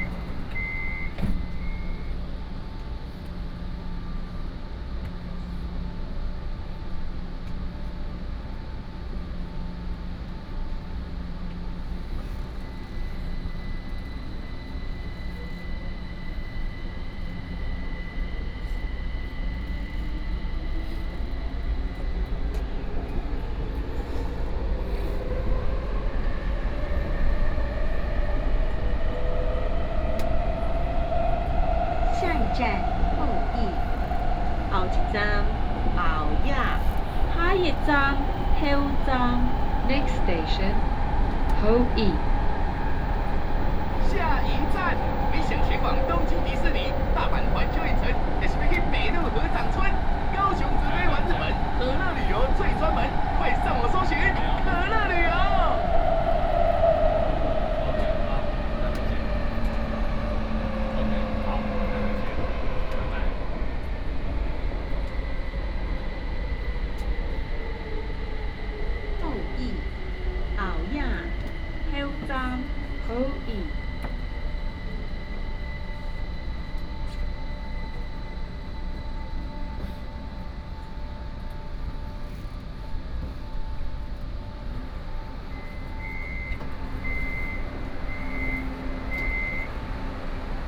三民區, Kaoshiung City - KMRT
from Aozihdi station to Kaohsiung Main Station
Kaohsiung City, Taiwan, 13 May